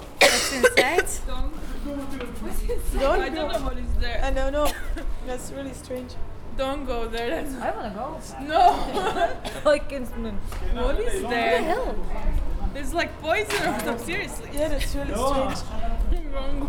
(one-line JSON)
{"title": "Via Dolorosa, Jeruzalem, Israël - Poison", "date": "2014-01-27 14:07:00", "description": "Coughing Tourists, inhaling poison while entering the church... (Recorded with ZOOM 4HN)", "latitude": "31.78", "longitude": "35.23", "altitude": "756", "timezone": "Asia/Hebron"}